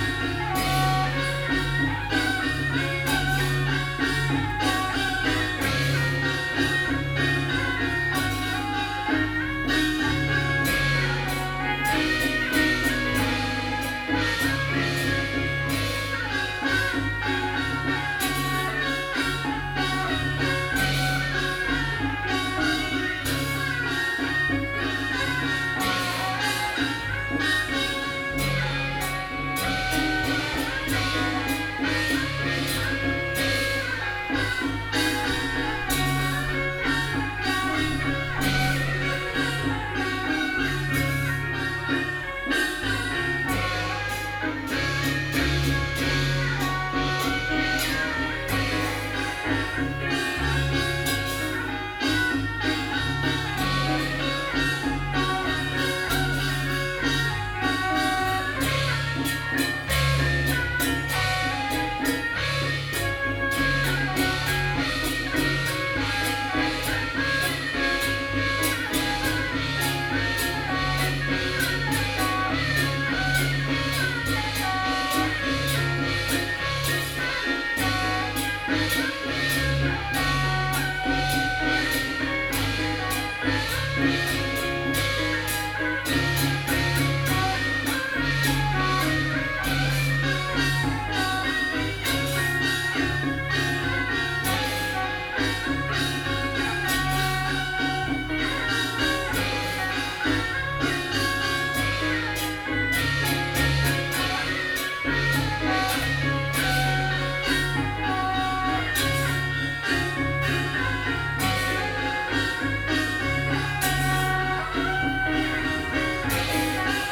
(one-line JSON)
{"title": "Beitou - Taiwanese Opera", "date": "2013-07-21 15:06:00", "description": "Taiwanese Opera, Sony PCM D50 + Soundman OKM II", "latitude": "25.14", "longitude": "121.49", "altitude": "19", "timezone": "Asia/Taipei"}